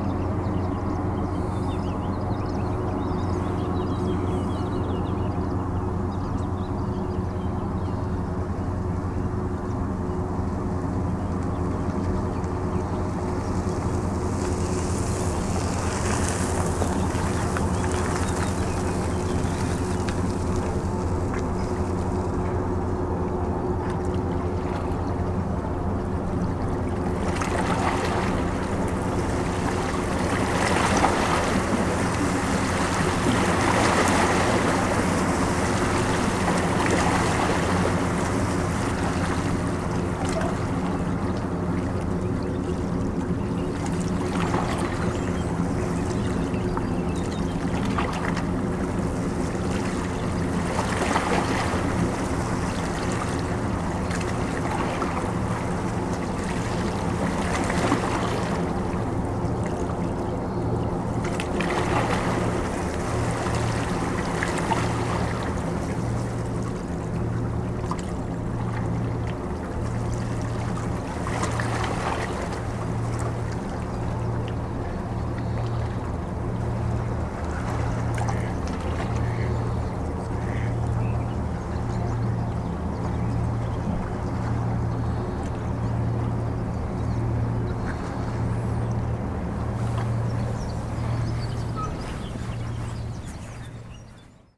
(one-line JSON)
{"title": "tondatei.de: burkheim am rhein, schifffahrt - burkheim am rhein, schifffahrt", "date": "2010-04-06 23:16:00", "description": "schiffe, rhein, wasser, fahhrrad, wellen, plätschern", "latitude": "48.10", "longitude": "7.58", "altitude": "184", "timezone": "Europe/Berlin"}